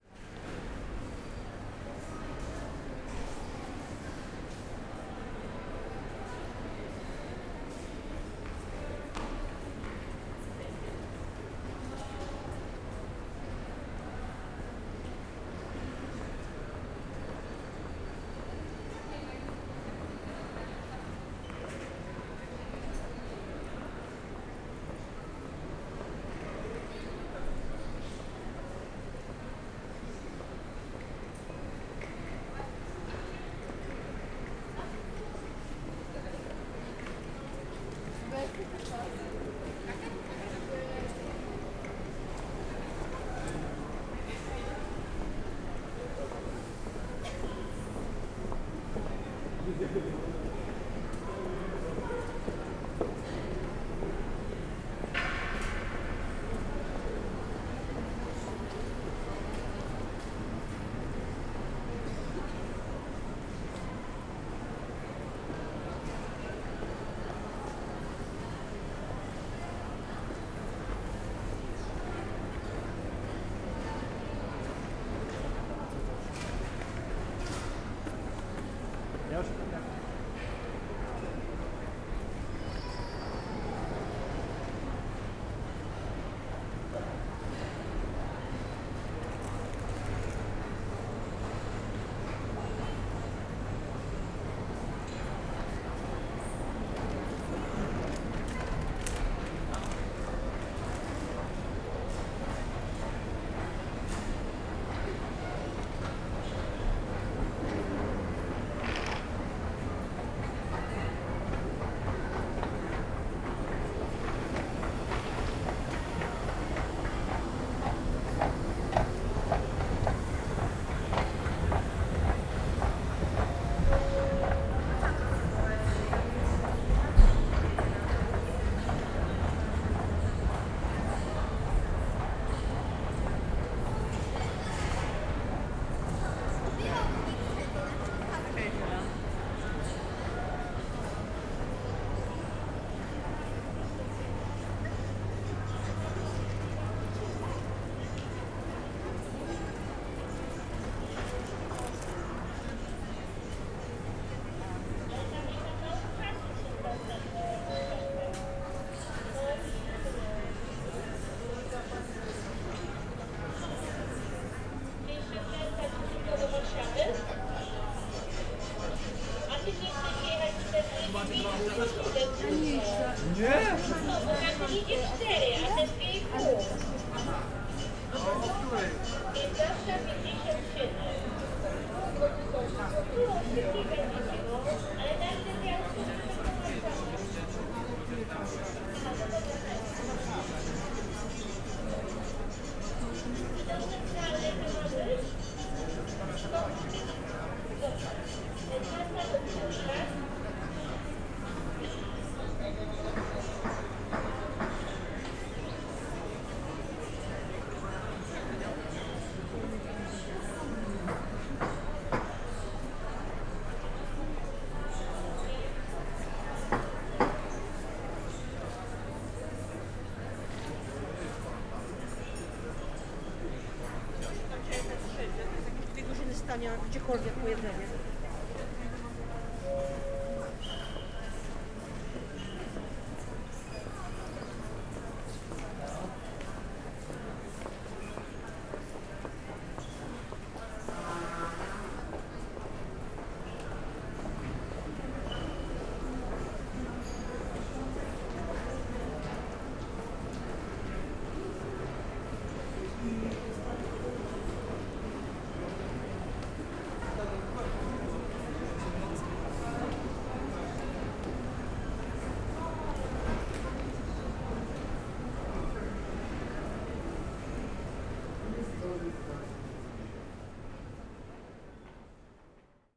Katowice Dworzec - station walk
walking around, waiting for the train
(Sony PCM D50, DPA4060)